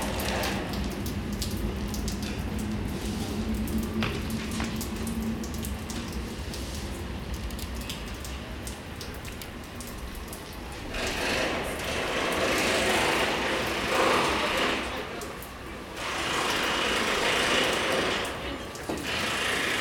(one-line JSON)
{"title": "London Borough of Southwark, Greater London, UK - Construction Work at Blackfriars Bridge, Leaking Pipe", "date": "2013-02-01 13:32:00", "description": "Similar to previous recording but from a different position and with microphone focused on a leaking pipe.", "latitude": "51.51", "longitude": "-0.10", "altitude": "10", "timezone": "Europe/London"}